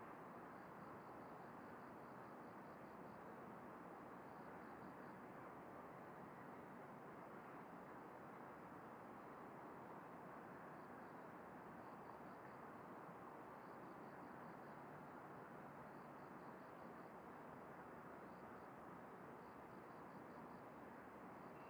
Shuishang Ln., Puli Township - early morning
early morning
Zoom H2n MS+XY
Puli Township, 水上巷, 19 April